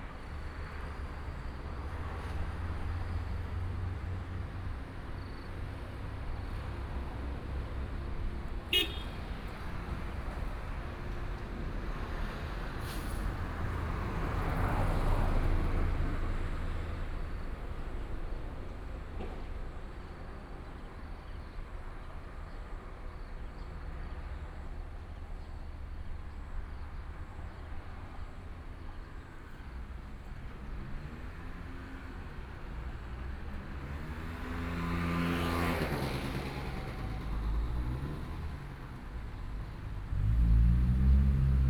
Traffic Sound, Next to the railway
Sony PCM D50+ Soundman OKM II